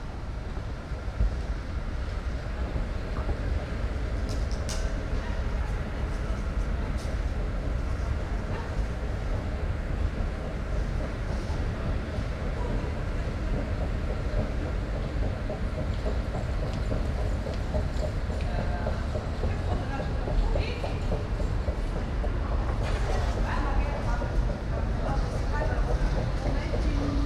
essen, rathaus, subway station
An der Untergrundbahn auf Gleis 4. Bahnen fahren herein, stoppen, Türen öffnen sich, Menschen verassen und besteigen den Zug, die Bahn fährt weiter.
At the subway station, track 4. Trains driving in, stop, doors opening, people exit and enter, train drives further drive further
Projekt - Stadtklang//: Hörorte - topographic field recordings and social ambiences
Essen, Germany, 31 May 2011, 18:33